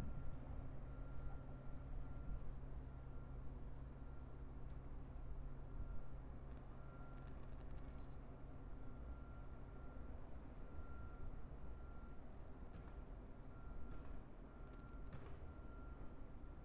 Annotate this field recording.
Wind whipping past the microphone, bird sounds, the humming of a machine powering Hiett. Occasional chatter from the recorder around 1;08. At 1:18, you hear a different bird call than the beginning. At the end of the recording, around 1:38, you can hear the beep of a car backing up.